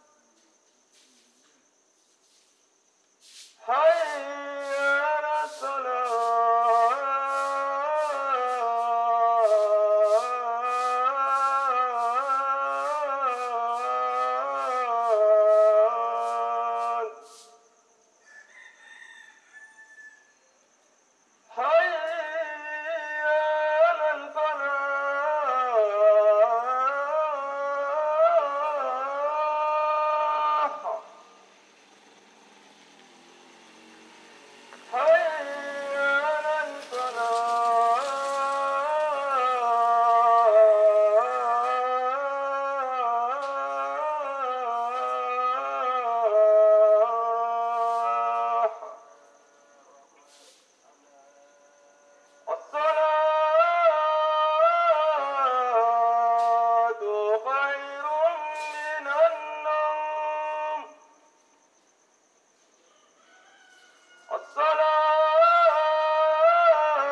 Bamako, Mali - Mosquée Mohamoud Dicko - Bamako
Bamako - Mali
Quartier de Badala Bougou
Mosquée Mohamoud Dicko
Appel à la prière de 4h30 AM